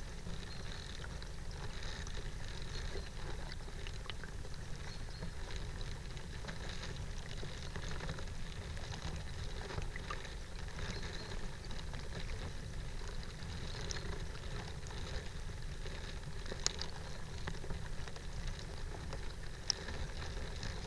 Vyzuonos, Lithuania, a bough in a river - a bough in a river
contact microphone recording. a bough fallen into the river
28 March 2012, ~17:00